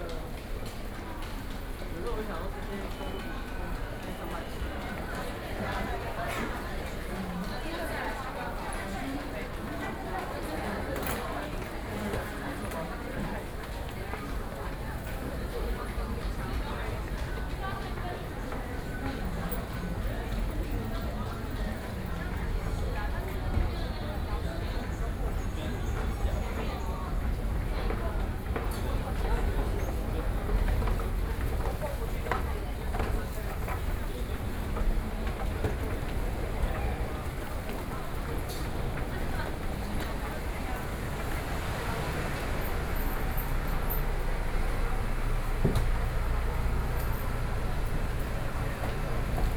Minquan West Road Station, Taipei City - soundwalk
walking in the Minquan West Road Station, Sony PCM D50 + Soundman OKM II
台北捷運中和線, 16 July 2013